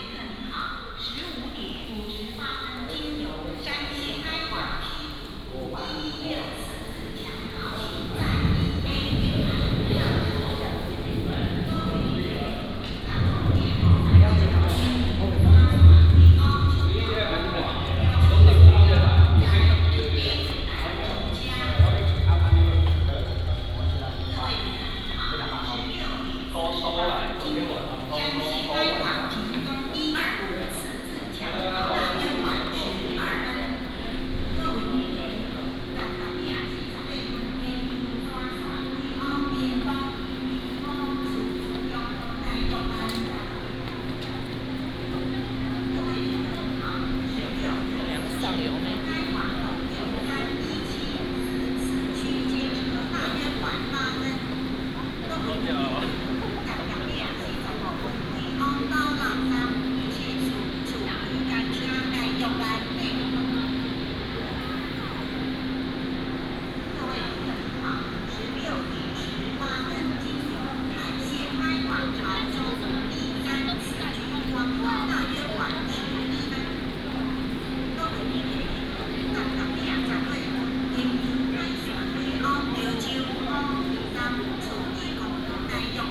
Xinying Station, 台南市新營區 - Walk at the station
Walk at the station, From the station hall, Through the underground road, To the station platform, Station information broadcast.
Xinying District, Tainan City, Taiwan, January 2017